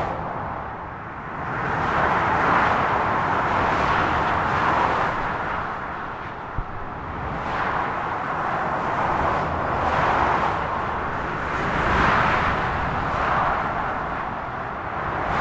La fureur de la circulation automobile sur l'A41 depuis le pont de Mouxy, micros orientés vers le Sud. Je m'étais allongé sur le trottoir pour protéger les micros du vent du Nord, ce qui a inquiété à juste titre un cycliste de passage, nous avons beaucoup parlé des bruits, cette autoroute est quand même une énorme nuisance pour le voisinage, quand on a connu comme c'était avant il y a de quoi se poser des questions.